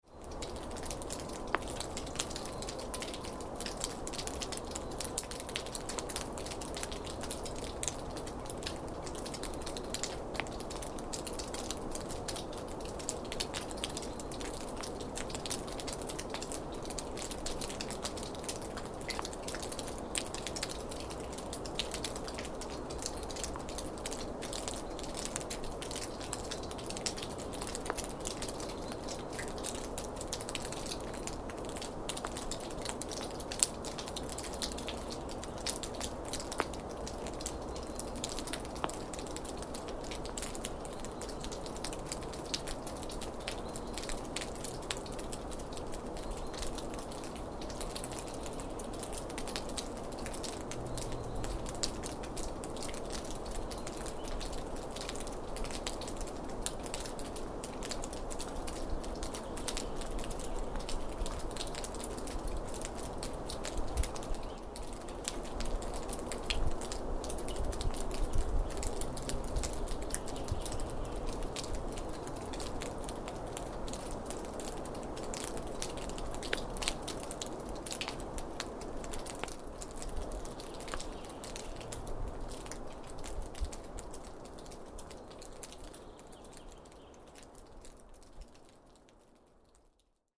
Gammlia forest, Umeå. Thawing snow drips
Thawing snow drips from under ski bridge